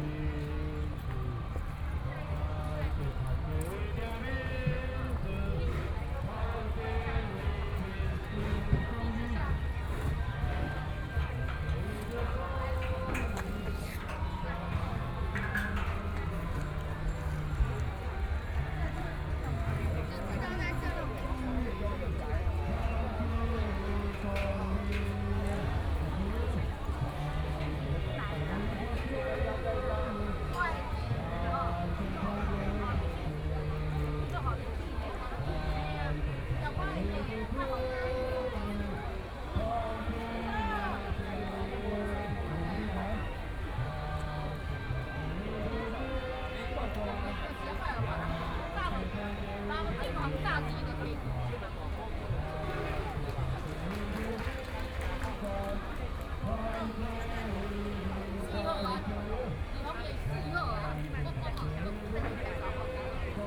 Peace Memorial Park, Taiwan - Memorial Day rally
228 rally to commemorate the anniversary event .Sunny afternoon
Please turn up the volume a little
Binaural recordings, Sony PCM D100 + Soundman OKM II